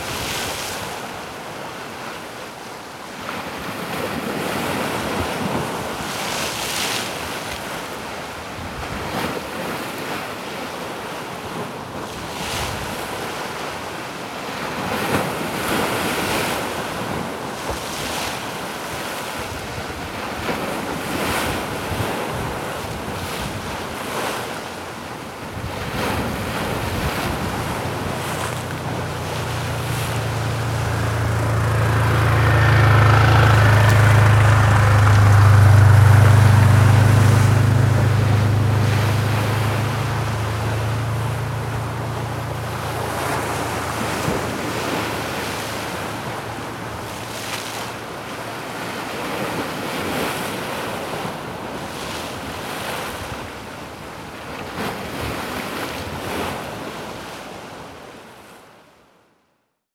You decided to go for a walk along the seaside via Riba Nemesi LLorens. At Punta des Baluard, it is a tight hairpin bend and there is no pavement. So here is your choice: either you get splashed by the sea that is hitting and copiously washing the street, or crashed by the many vehicles - cars and above all scooters and quads - that noisily run through the town.